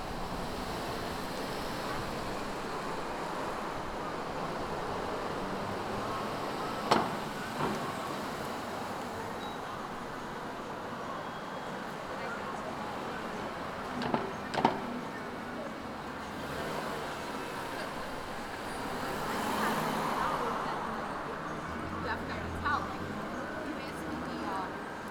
New Paltz, NY, USA - Plattekill and Main Street Corner
This is the main intersection of downtown New Paltz. It was taken outside the local Starbucks during a time where traffic was continuous and people were walking freely downtown. The recording was taken using a Snowball condenser mic with a sock over top to reduce the wind. It was edited using Garage Band on a MacBook Pro.